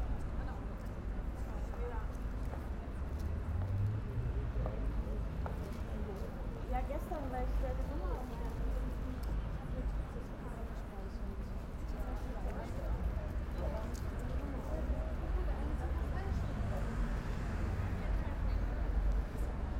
bochum, schauspielhaus, square
audience approaching the theatre.
recorded june 23rd, 2008 before the evening show.
project: "hasenbrot - a private sound diary"